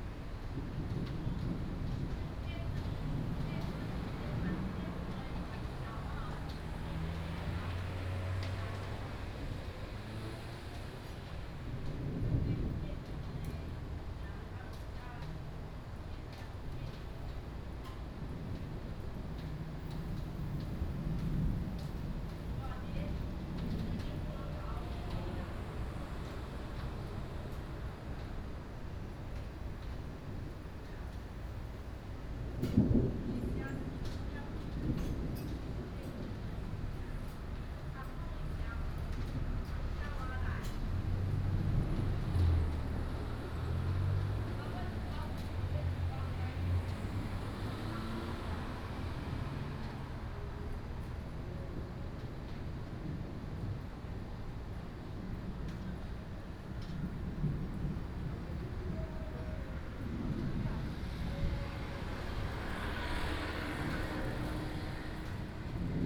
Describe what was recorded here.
In the street, in front of the store, Thunder, Traffic Sound